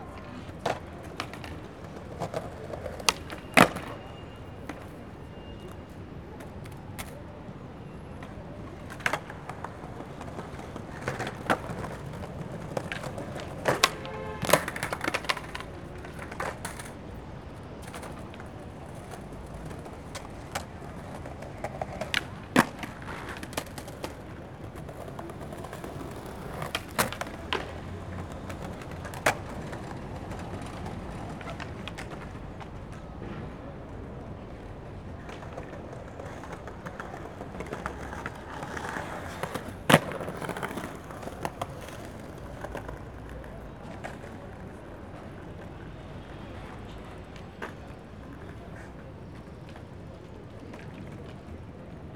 A group of young skaters try new tricks.
Zoom H4n
Lisboa, Portugal, 18 April 2016